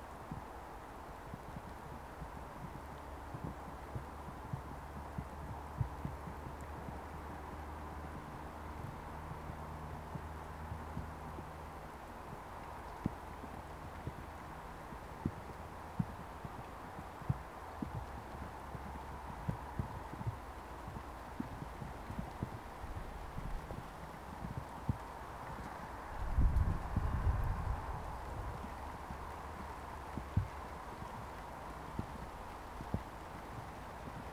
Lyndhurst, UK - 027 Rain on car roof, siren
Elcombes Cl, Lyndhurst, UK, 27 January 2017